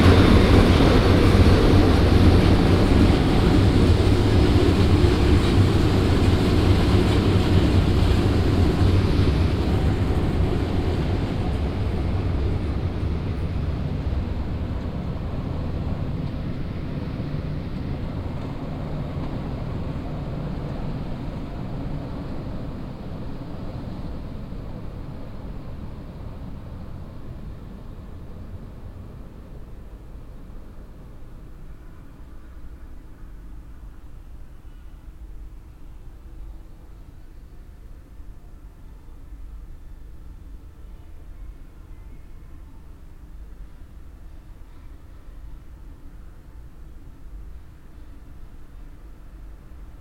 Düsseldorf, railway crossing
An intense knot point of railway traffic. trams and different types of trains passing by in different speeds.
soundmap nrw - social ambiences and topographic field recordings